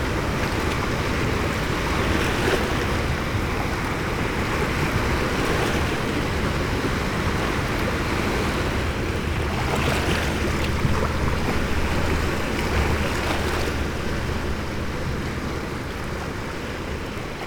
late aftrenoon sea, Novigrad - while reading, silently
Novigrad, Croatia, 20 July 2014